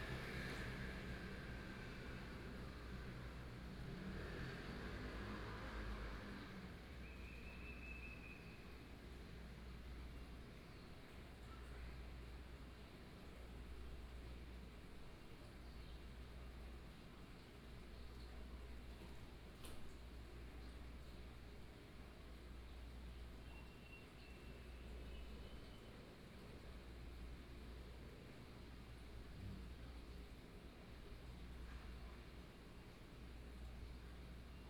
Unknown birdsong, Binaural recordings, Sony PCM D50 + Soundman OKM II
Beitou District, Taipei City, Taiwan